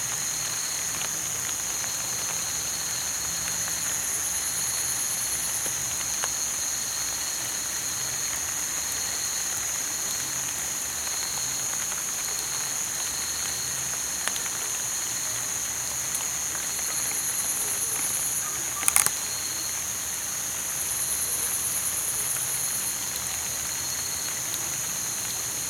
Hawkesworth Bridge, Macal River Park, Joseph Andrew Dr, San Ignacio, Belize - Cicadas in the rain
Cicadas during rainy season in San Ignacio, Belize
January 2016